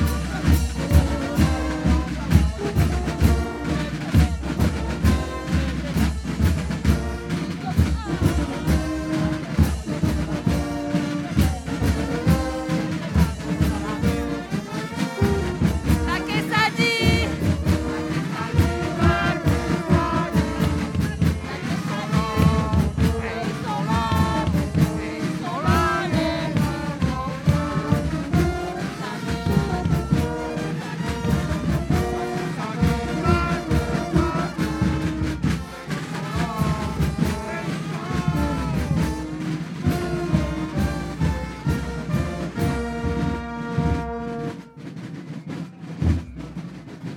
Rue du Général de Gaulle, Zuydcoote, France - Carnaval de Zuycoot
Dans le cadre des festivités du Carnaval de Dunkerque
Bande (défilée) de Zuydcoot (Département du Nord)